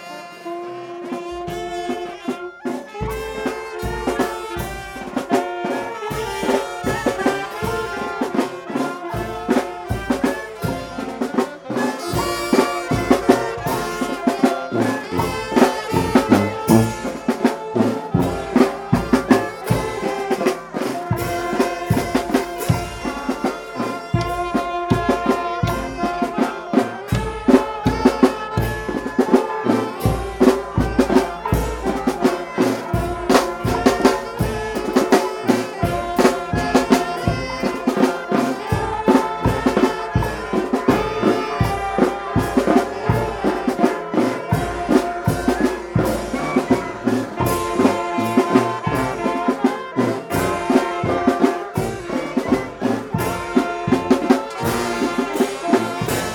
{"title": "C. 14 Pte., San Miguel, Zona Arqueológica San Andrés Cholula, San Andrés Cholula, Pue., Mexique - Cholula - Mexique", "date": "2019-09-22 14:15:00", "description": "Cholula - Mexique\nQuelques minutes avec \"Los Coyotes\" - Procession en musique\nPrise de sons : JF CAVR0", "latitude": "19.06", "longitude": "-98.30", "altitude": "2195", "timezone": "America/Mexico_City"}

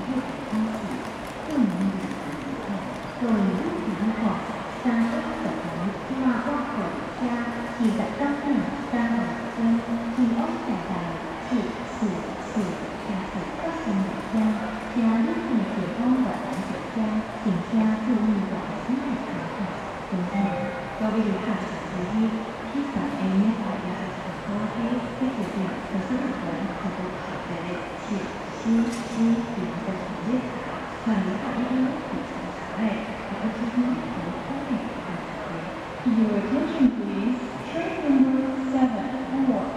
{"title": "Zuoying/THSR Station - Broadcasting", "date": "2012-02-25 19:30:00", "description": "In the station hall, Sony ECM-MS907, Sony Hi-MD MZ-RH1", "latitude": "22.69", "longitude": "120.31", "altitude": "14", "timezone": "Asia/Taipei"}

